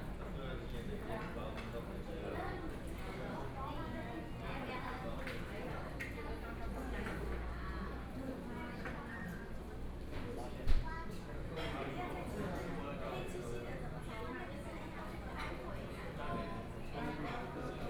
In the restaurant, Binaural recordings, Zoom H4n+ Soundman OKM II